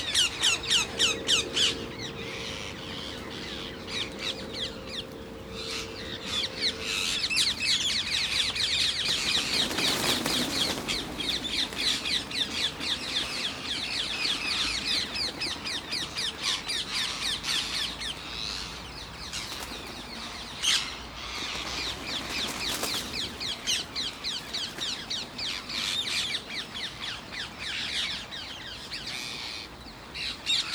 Garden of Remembrance, London Borough of Lewisham, London, UK - Ring-necked Paraket roost very close
This is slightly later at the thousand strong parakeet roost when it is beginning to quieten as night falls. It's easier to hear individual birds some of which are only a few meters away.